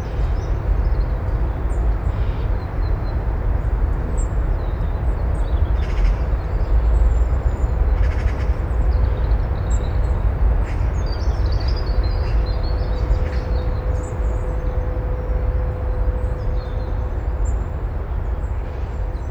Safe in this enclave, the weight and wash of movement presses in. Resting above this mass, the passing clatter of a wheelbarrow, the chatter of magpies and the encircling rustle of wildlife growing familiar with my presence.
England, United Kingdom, 23 September